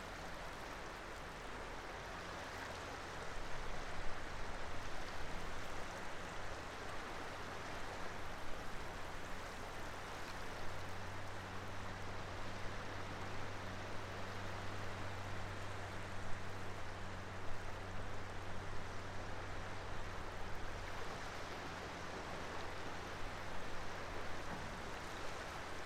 Piyale Paşa, Larnaka, Cyprus - Larnaca Beach Morning
Was recorded by Tascam iM2 with Iphone 4s in the morning by the sea.
25 February, ~12:00